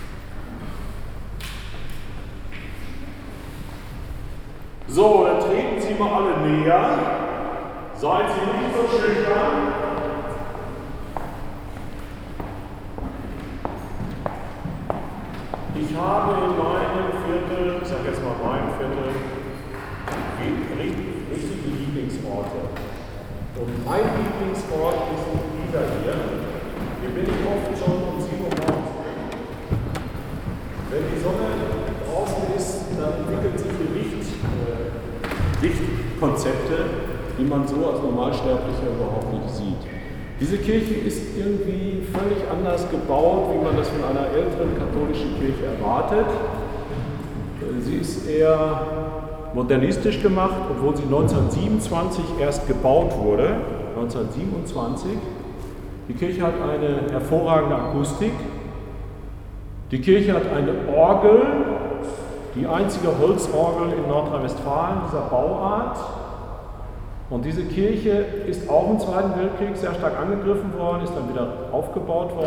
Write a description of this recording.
At the end of a guide tour to special places around the “Marienhospital”, Werner Reumke leads us to one of his favorite places in the Martin-Luther-Viertel, the chapel of the Hospital… he often comes here early in the morning, he says, musing over the fantastic colored light reflexes through the windows… Am Ende einer Führung zu besonderen Orten um das Marienhospital führt uns Werner Reumke an einen seiner Lieblingsorte im Martin-Luther-Viertel, die Kapelle des Krankenhauses…